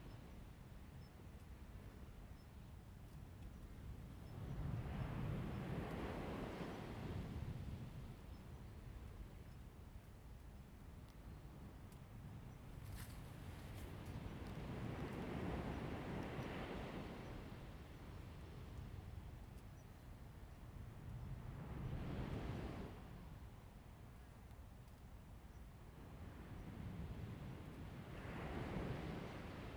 青岐, Lieyu Township - sound of the waves
Sound of the waves
Zoom H2n MS +XY